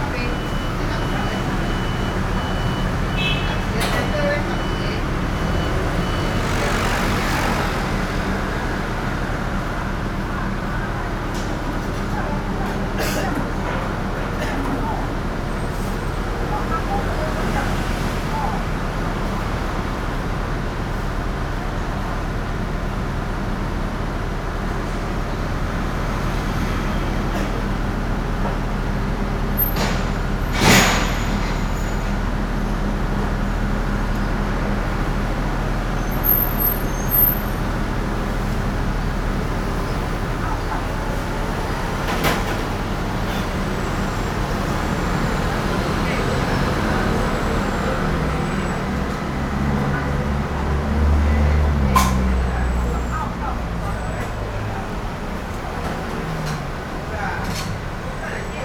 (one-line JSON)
{"title": "Cianjin District, Kaohsiung - In the restaurant", "date": "2012-04-05 16:27:00", "description": "Restaurant staff conversations sound, Traffic Noise, Sony PCM D50", "latitude": "22.63", "longitude": "120.29", "altitude": "13", "timezone": "Asia/Taipei"}